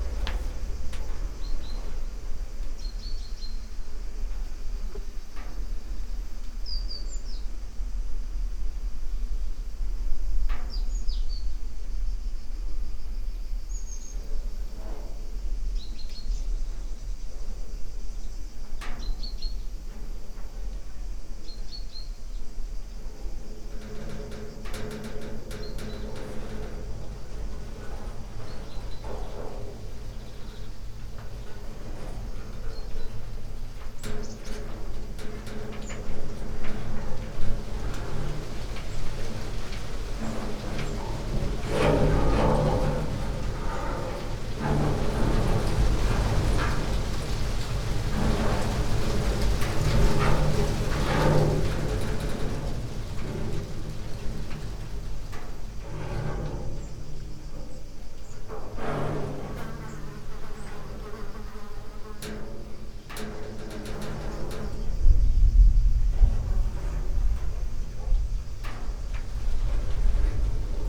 tree branches on the top of metal shed, moved by wind, dry leaves inside, steps, distant thunder, cicadas ...
Croatia